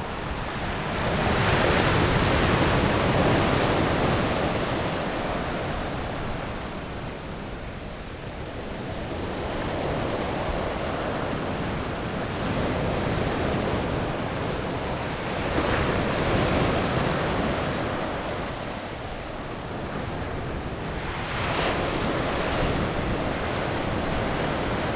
南田村, Daren Township - the waves
Sound of the waves
Zoom H6 XY + Rode NT4